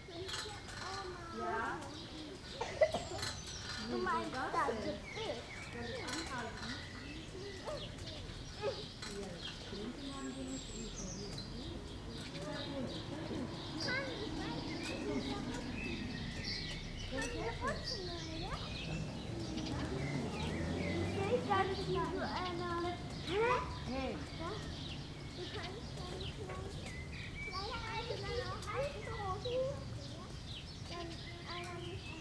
Molsberg, Westerwald - kleiner Spielplatz / little playground
21.05.2009 Molsberg, Dorf mit Schloss im Westerwald, Feiertag, Kinder auf dem Spielplatz
little Westerwald village with castle, holiday, children playing
Germany, 21 May